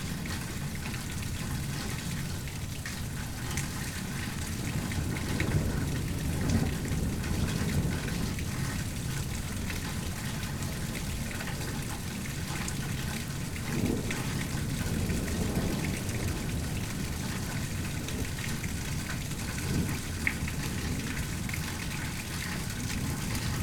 Waters Edge - Severe Warned Storm
A line of severe warned storms came across the metro in the evening which put us under a Sever Thunderstorm warning and a Tornado warning for the adjacent county. The outdoor warning sirens can be heard early in the recording for the Severe Thunderstorm warning and then later from the adjacent county for the tornado warning. Rainfall rates at the beginning of the storm were measured by my weather station at 8.6 inches per hour and we got about 1.25 inches in a half hour. Luckily we didn't get much wind so there was no damage.
Minnesota, United States, 11 May 2022